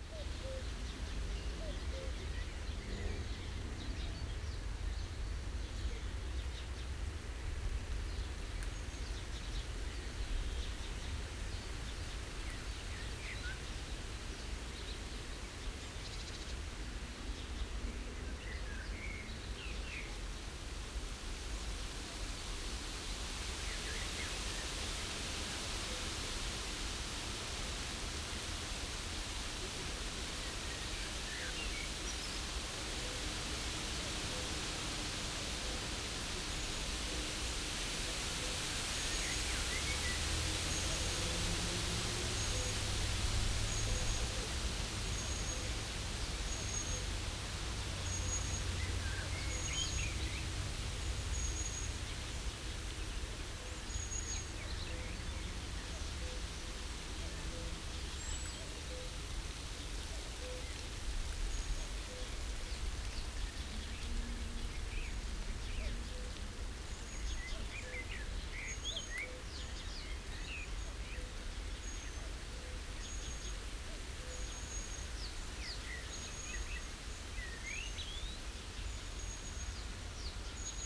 Jelena-Santic-Friedenspark, Marzahn, Berlin - wind in reed, cuckoo calling
on a wooden bridge over the river Wuhle, wind in reed, call of a cuckoo
(SD702, DPA4060)
Berlin, Germany, 23 May